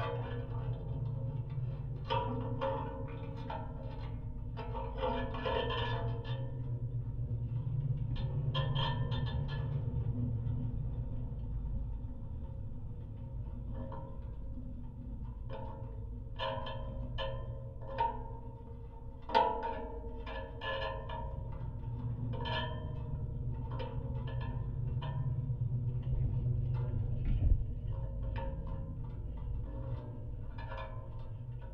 metallic constructions on abandoned water tower. 4 contact microphones
27 September 2017